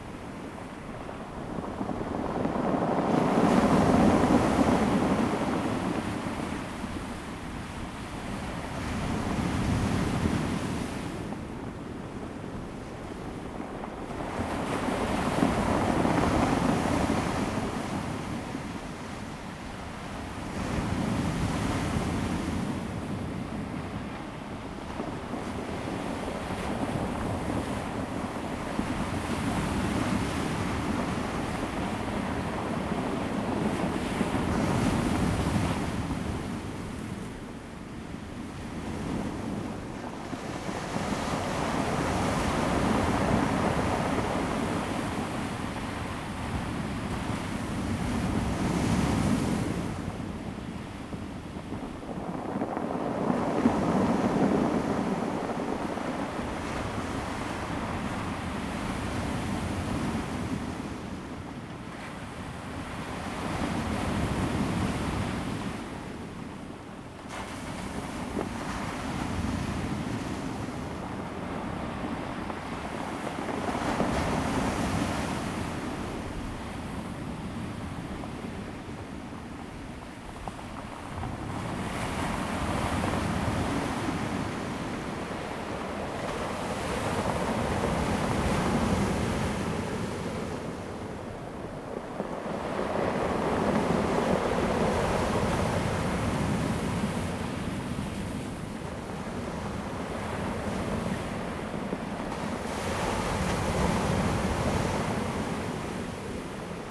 Daren Township, Taitung County - Sound of the waves
In the circular stone shore, The weather is very hot
Zoom H2n MS +XY